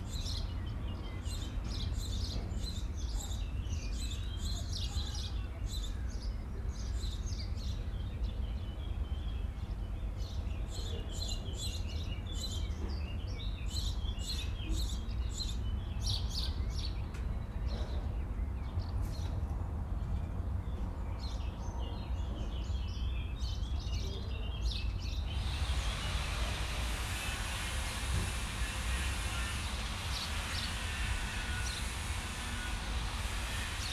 Maribor, Obrezna ulica - metalworkers
sound of a metal workshp at Obrezna ulica, hum of the city above river Drava.
(SD702 DPA4060)